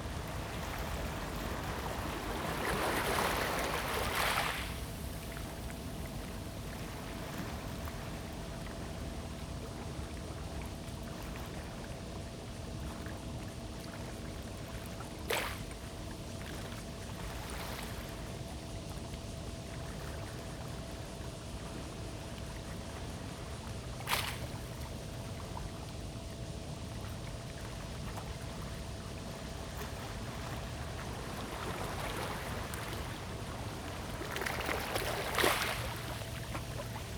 Tamsui River, New Taipei City - Acoustic wave water
Acoustic wave water, There are boats on the river
Zoom H2n MS+XY